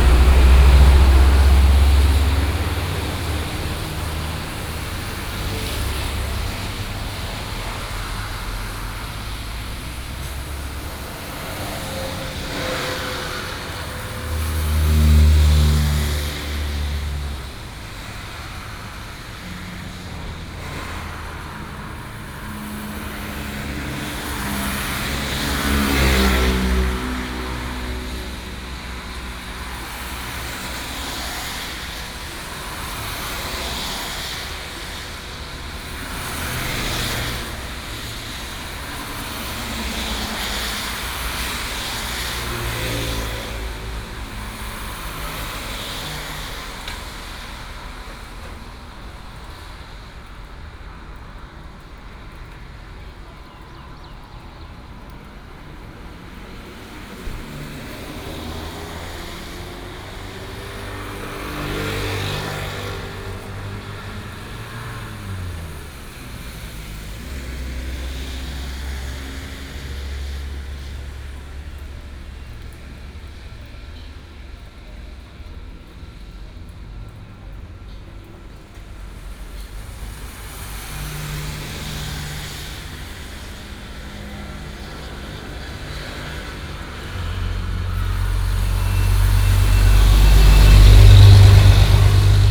永吉, 西部濱海公路 Cigu Dist., Tainan City - Highway after rain
Traffic sound, Highway after rain